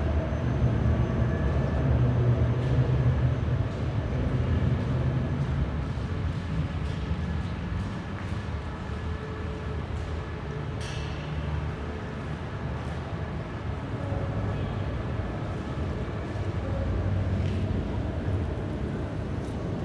empty space under the S-Bahn tracks, Aporee workshop
radio aporee sound tracks workshop GPS positioning walk part 5, Alexanderplatz station